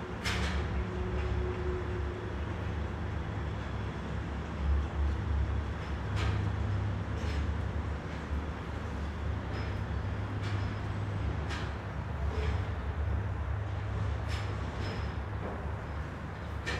Stuyvesant Cove, New York, NY, USA - Stuyvesant Cove
Creaking sounds from the buoyant platform.